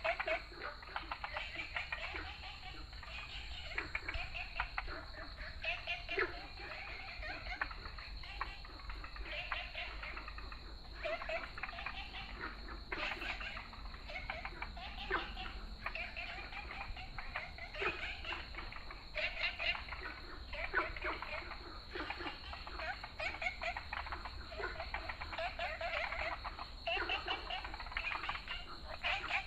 Fuyang Eco Park, Da'an District, Taipei City - Frogs chirping
in the Park, Frogs chirping